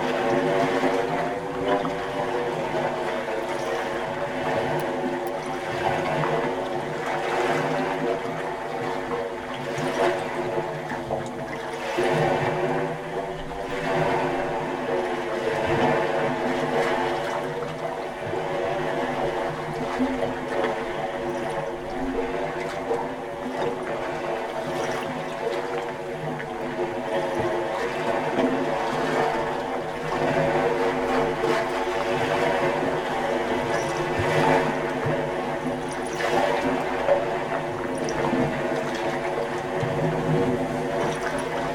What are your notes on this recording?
Glass Tubes on the Peipsi shore: Estonia